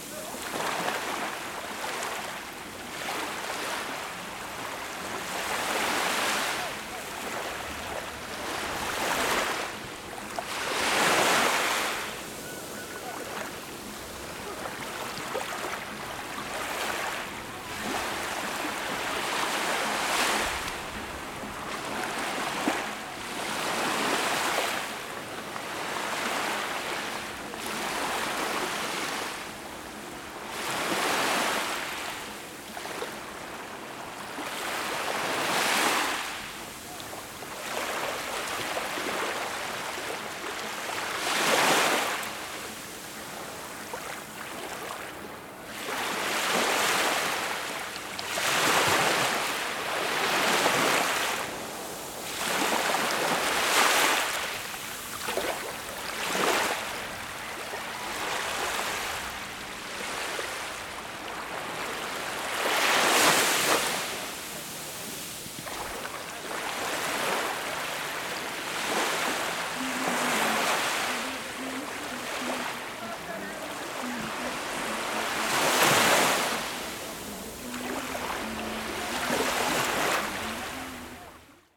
Blvd. Mohamed VI, Tanger, Morocco - الشاطئ البلدي (Plage municipale)
People enjoy walking and gathering in the evening along the beach, الشاطئ البلدي (Plage municipale)
(Zoom H5)
Tanger-Tétouan-Al Hoceima ⵟⴰⵏⵊ-ⵟⵉⵜⴰⵡⵉⵏ-ⵍⵃⵓⵙⵉⵎⴰ طنجة-تطوان-الحسيمة, Maroc, January 2020